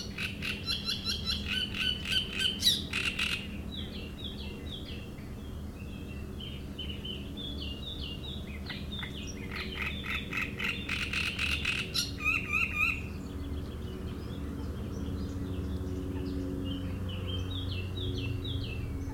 Roselière plage Chatillon, Chindrieux, France - Rousserole turdoïde.
Le chant criard de la rousserolle turdoïde un migrateur qui vient d'Afrique. Elle peut chanter jour et nuit.
Auvergne-Rhône-Alpes, France métropolitaine, France, 15 May 2004, 09:30